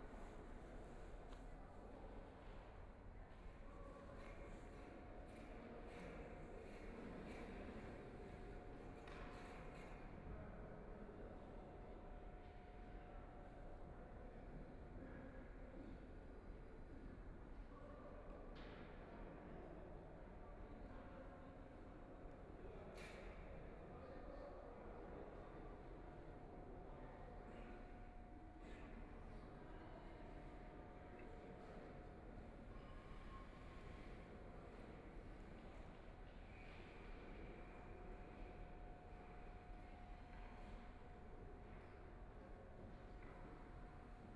Power Station of Art, Shanghai - in the Museum
Standing on the third floor hall museum, The museum exhibition is arranged, Binaural recording, Zoom H6+ Soundman OKM II
Shanghai, China, 28 November, ~14:00